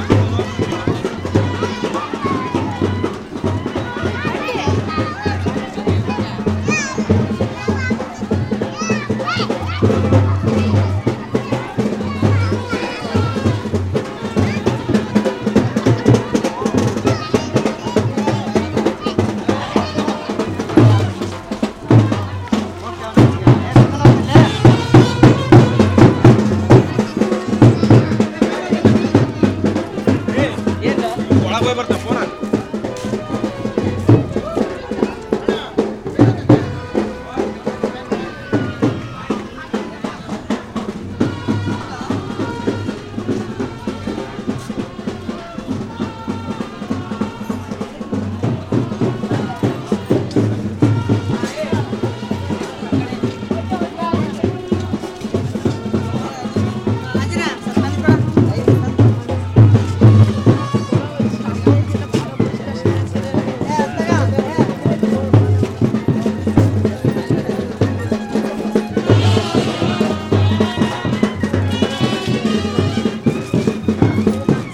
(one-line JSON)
{"title": "Hampi, Hampi Bazaar Street, Procession", "date": "2009-02-26 17:34:00", "description": "India, Karnataka, Hampi, Procession, music", "latitude": "15.33", "longitude": "76.46", "altitude": "427", "timezone": "Asia/Kolkata"}